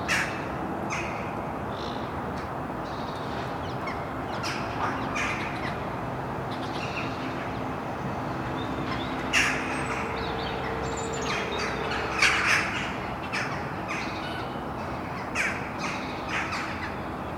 Mariahoeve, Den Haag, Nederland - Vogels mariahieve
using Sony PCM D50